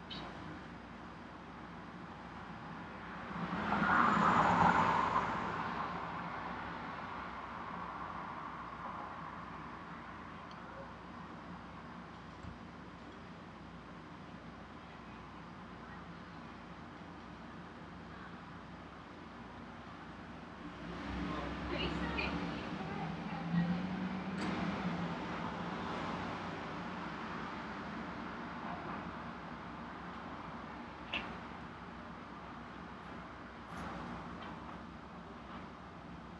Bedford-Stuyvesant, Brooklyn, NY, USA - Monday night Brooklyn street sounds
The corner of Putnam Avenue and Classon Avenue at the border of the Bedford-Stuyvesant and Clinton Hill neighborhoods. 10pm on a Monday night in August. Street sounds, cars, stereos, bicycles, conversation, etc. Recorded on a MacBookPro